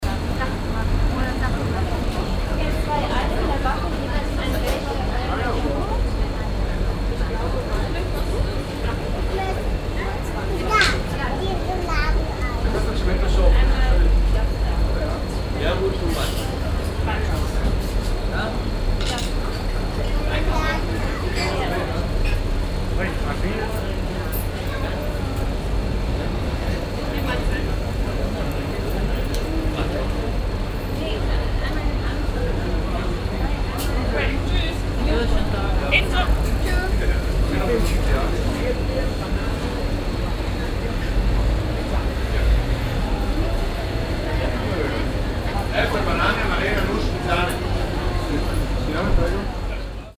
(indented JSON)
{
  "title": "cologne, breite strasse, duMont caree, eisladen - cologne, breite strasse, dumont caree, eisladen",
  "date": "2008-08-02 14:33:00",
  "description": "starker andrang am eisladen, nachmittags\nsoundmap nrw: social ambiences/ listen to the people - in & outdoor nearfield recordings",
  "latitude": "50.94",
  "longitude": "6.95",
  "altitude": "57",
  "timezone": "Europe/Berlin"
}